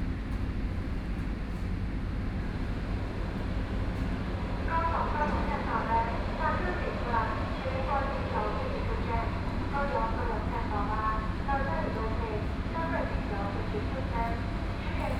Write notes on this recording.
From the station hall, Then walk towards the direction of the station platform